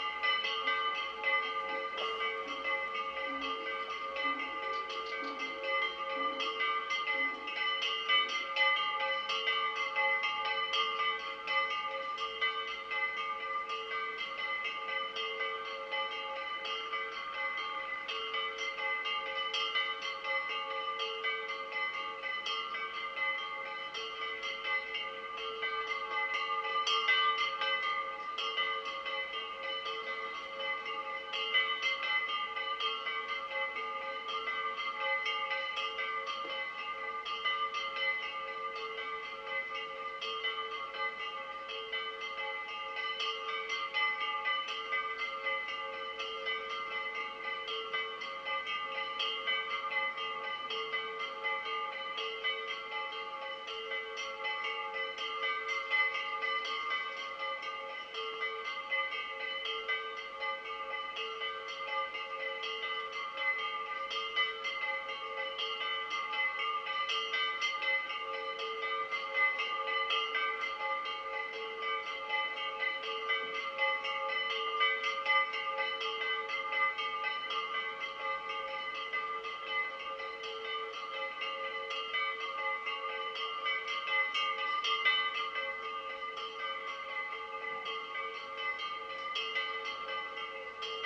Ano Petali, Sifnos, Greece - Sifnos Bells - August 15th
August 15th is a major religious holiday in Greece. in the morning, all churches ring their bells. the recording is of this soundscape, facing east from the point on the map, with many small churches on either side of the valley at various distances. the original was 150 minutes long, so this is an edited version. (AT8022, Tascam DR40)
Artemonas, Greece, 15 August 2015, 07:30